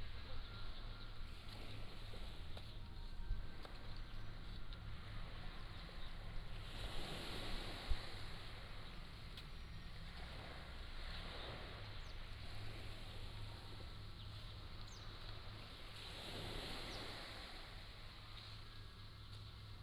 Nangan Township, Taiwan - On the coast
Sound of the waves, Electric box noise
連江縣, 福建省, Mainland - Taiwan Border, 15 October 2014, 08:36